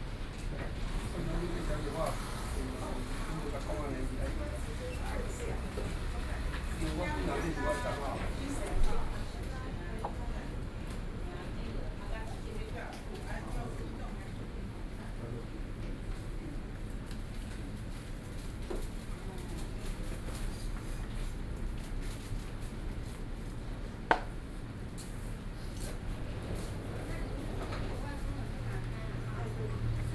At the post office, Sony PCM D50 + Soundman OKM II
北投區, 台北市 (Taipei City), 中華民國